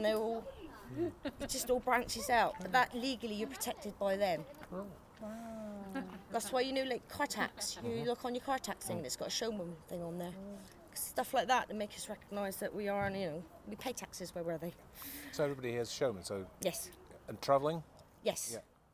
Efford Walk One: About the Showmans Guild - About the Showmans Guild
Plymouth, UK, 14 September 2010, 18:25